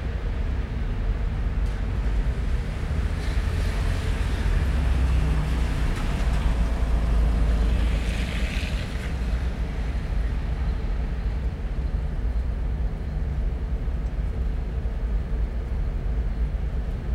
The hum of the city, with sirens, taxis and people, on a rainy night in Manchester. Recorded from a third floor window.
United Kingdom, European Union, 17 October, ~21:00